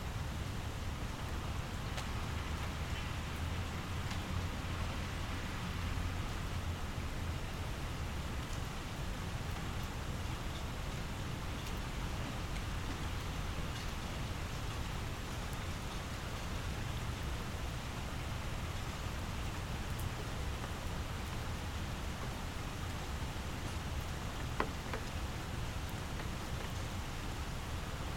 Heavyrain in Ridgewood, Queens.